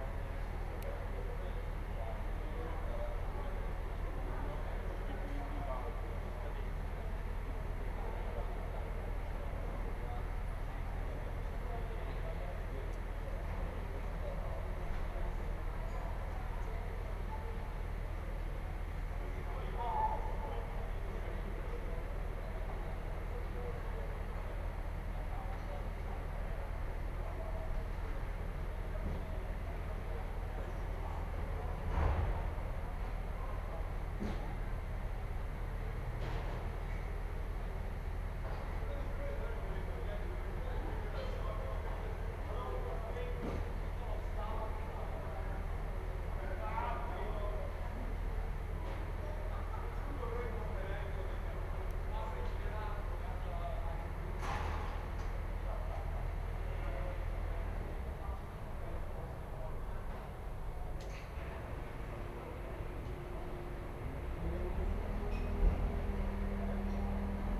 Ascolto il tuo cuore, città, I listen to your heart, city. Several chapters **SCROLL DOWN FOR ALL RECORDINGS** - Round one pm with the sun but not much dog in the time of COVID19 Soundscape
"Round one pm with the sun but not much dog in the time of COVID19" Soundscape
Chapter XXXIX of Ascolto il tuo cuore, città. I listen to your heart, city
Friday April 10th 2020. Fixed position on an internal terrace at San Salvario district Turin, Thirty one days after emergency disposition due to the epidemic of COVID19.
Start at 1:15 p.m. end at 2:15 p.m. duration of recording 1h 00’00”.